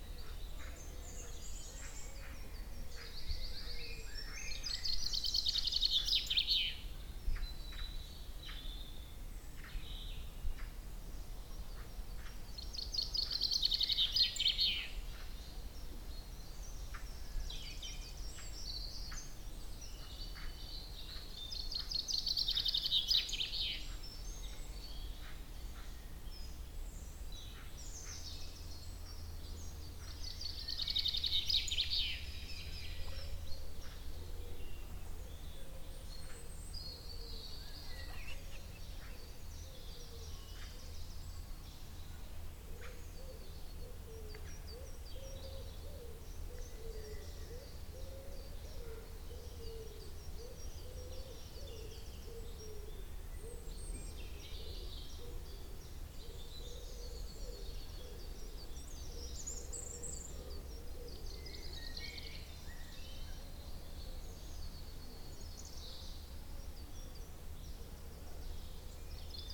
Stackpole, Pembrokeshire, UK - Listening to the birds
After a beautiful long walk with my friend Brenda, we ended up in this forest, where I stood for a while just listening to the assembled birds. It was a really sunny day. I heard wood pigeons, tits, robins and maybe also blackbirds? Recorded on EDIROL R-09 with just the onboard microphones.
9 April 2015, 15:00